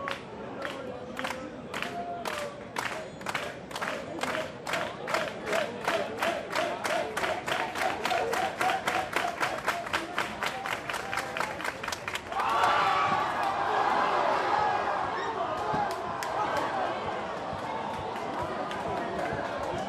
De Weteringschans, Amsterdam, Nederland - Spanish soccer fans (WC2010)
Spanish soccer fans watching the game (in café’s around the square) between Spain and Paraguay in the 2010 World Cup. This recording was made around the 58th minute were there several penalties in a row.
Zoom H2 internal mics.
Amsterdam, Netherlands, 3 July, 19:27